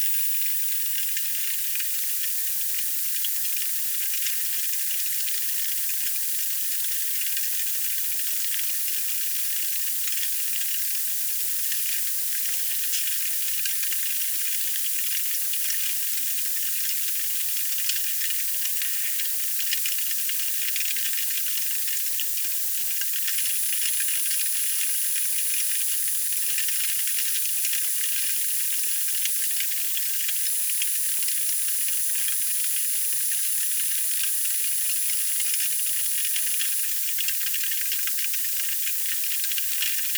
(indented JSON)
{
  "title": "c/ San Cosme y San Damián, Madrid - 2014-02-03 Snow",
  "date": "2014-02-03 11:30:00",
  "description": "2014-02-03, Madrid. Snow falling on my roof window.Recorded with a pair of Jez Riley French's contact microphones.",
  "latitude": "40.41",
  "longitude": "-3.70",
  "timezone": "Europe/Madrid"
}